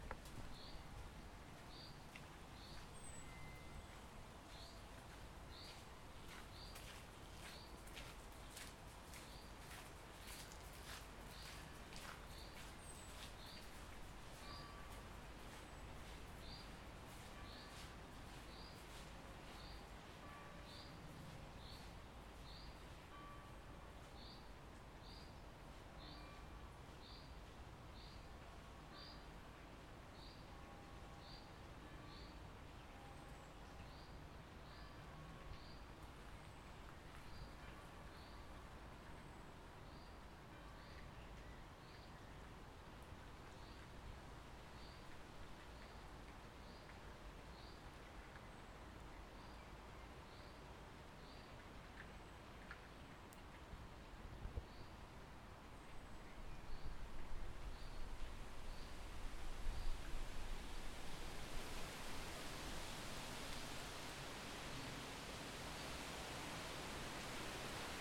Unnamed Road, Schwetzingen, Deutschland - Schlossgarten Schwetzingen
Schritte im Kies, Rauschen des Windes in den Blättern der Bäume, Vogelgezwitscher. Morgenstimmung.